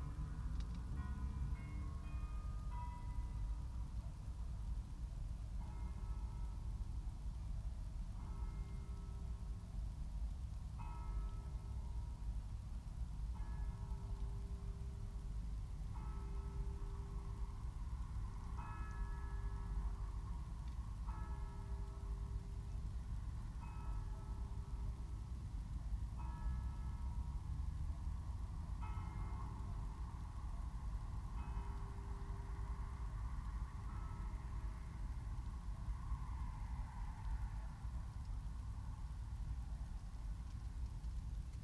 Streaming from a hedgerow in large intensively farmed fields near Halesworth, UK - Midnight clock chimes across the fields
At midnight much is quiet and it's possible to hear considerable distances. Here the clock chimes the change of day from the church in the nearby market town of Halesworth about 2km away.